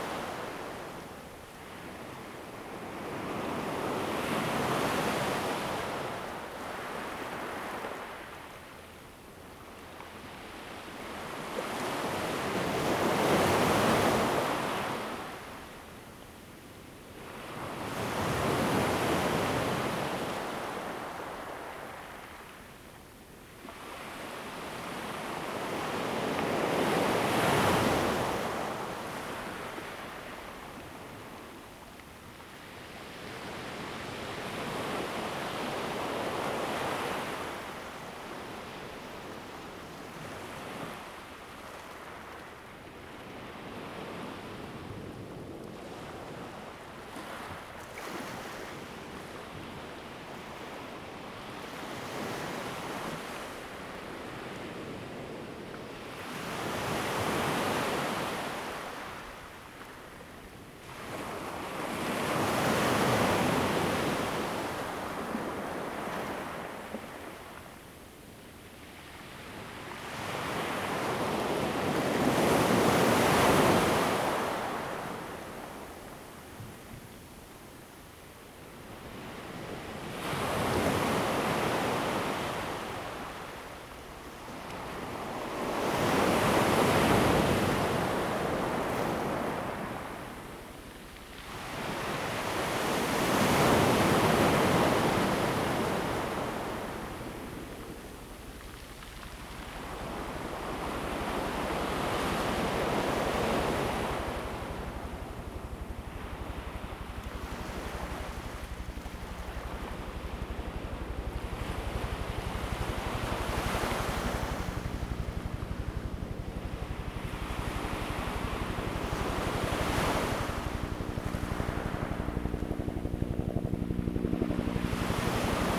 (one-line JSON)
{"title": "Chenggong Township, Taiwan - Sound of the waves", "date": "2014-09-08 10:04:00", "description": "Sound of the waves\nZoom H2n MS +XY", "latitude": "23.13", "longitude": "121.40", "altitude": "1", "timezone": "Asia/Taipei"}